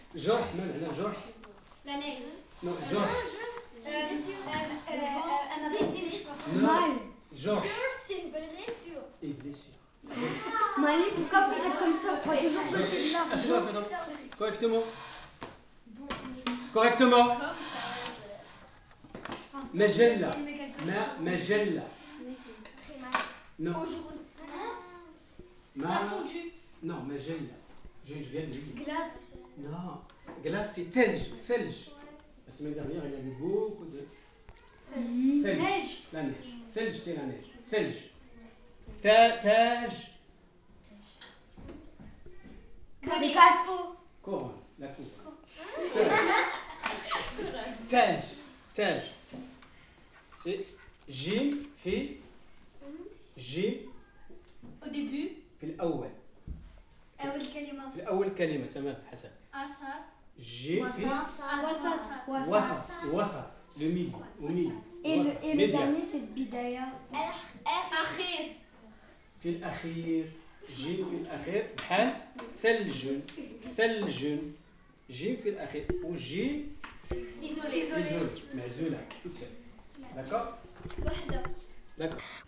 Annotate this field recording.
Some children and teacher learning arab language in the Ampère school.